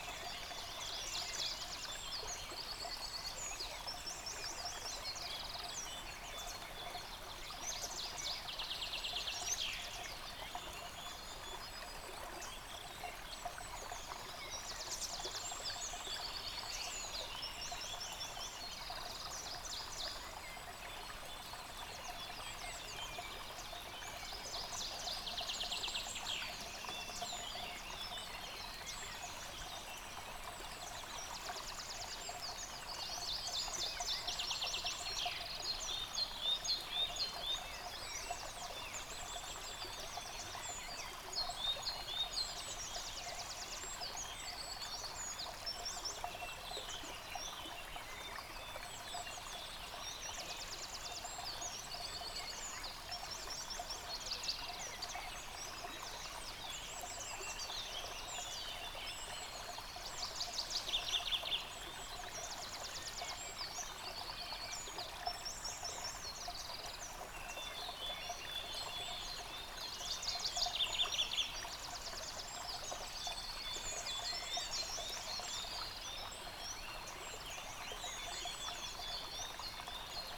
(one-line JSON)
{"title": "Dlouhý Důl, Krásná Lípa, Czechia - Ptáci a potok", "date": "2020-05-10 07:21:00", "description": "Ptačí sněm snímaný u potoka.. nahráno na ZOOM H6", "latitude": "50.92", "longitude": "14.47", "altitude": "373", "timezone": "Europe/Prague"}